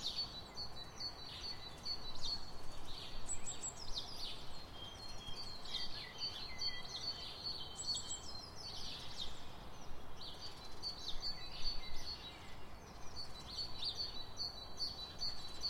Sounds from my Window, Glasgow, UK - Dawn Chorus
I was woken by bird song very early the other morning and got up to record it. I jammed my field recorder between the widow and ledge and left it there as I continued to doze...